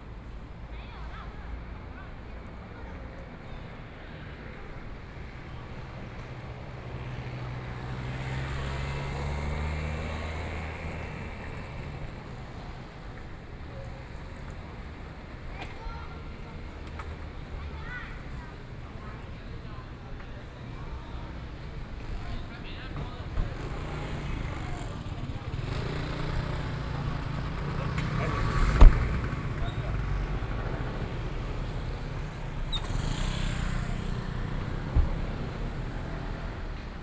Walking across the different streets, Traffic Sound, Walking towards the Park
Please turn up the volume a little
Binaural recordings, Sony PCM D100 + Soundman OKM II
Taipei City, Taiwan, 28 February 2014